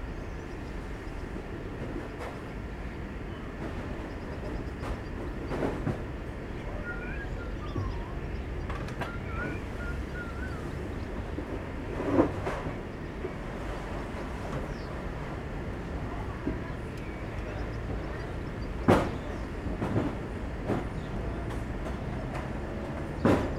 Bus Station, Nova Gorica, Slovenia - Delivery of goods to a bar
The sounds of a delivery of goods for a fast food restourant Mackica.